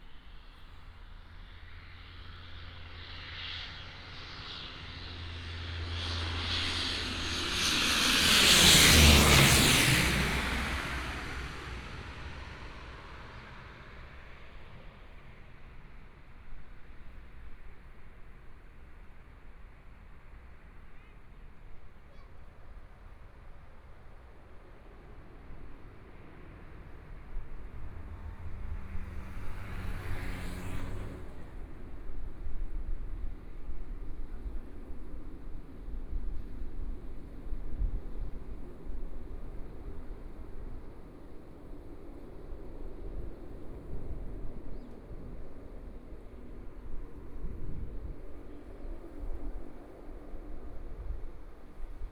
中山區大佳里, Taipei City - Aircraft flying through
Aircraft flying through, Traffic Sound, Binaural recordings, Zoom H4n+ Soundman OKM II